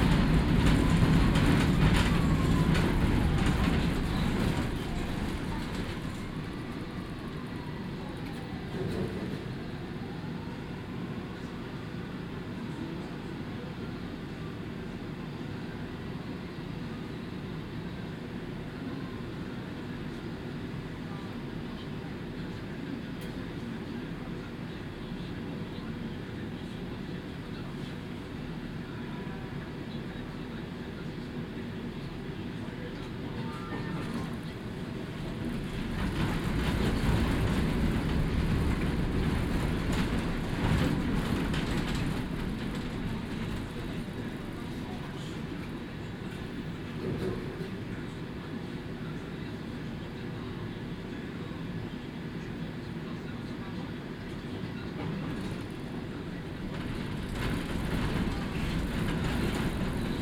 Rue Royale, Bruxelles, Belgique - Tram 93 binaural
Old model tram, lot of vibrating sounds.
Tech Note : SP-TFB-2 binaural microphones → Sony PCM-M10, listen with headphones.